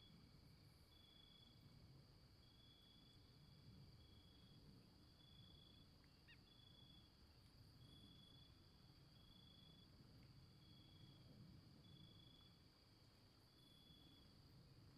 Santuario, Antioquia, Colombia - Natural soundscape Santuario
Field recording captured on the rural areas of Santuario, Antioquia, Colombia.
10:00 pm night, clear sky
Zoom H2n inner microphones in XY mode.
Recorder at ground level.
9 September 2013